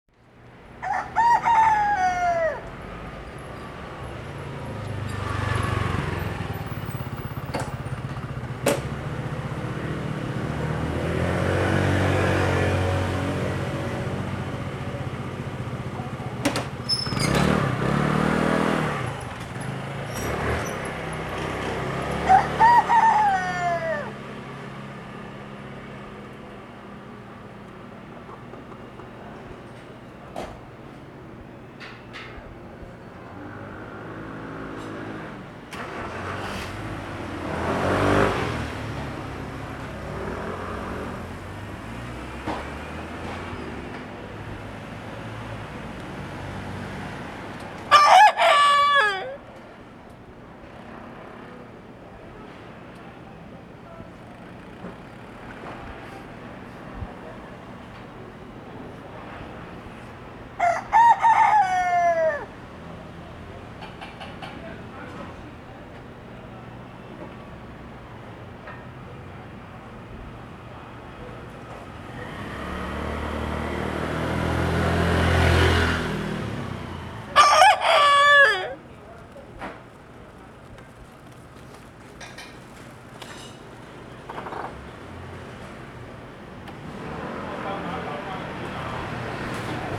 Ln., Sec., Lixing Rd., Sanchong Dist., New Taipei City - Morning market
In the Market, Chicken sounds
Sony Hi-MD MZ-RH1 +Sony ECM-MS907